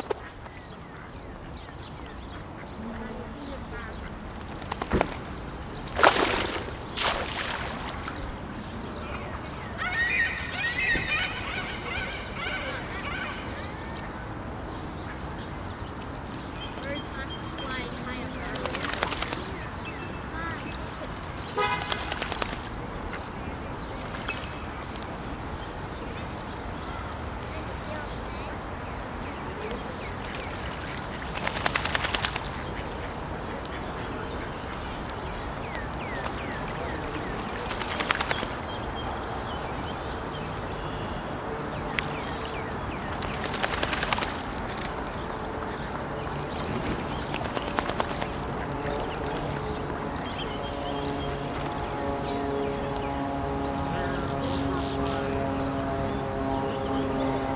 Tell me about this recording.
recording ducks and geese at the lagoon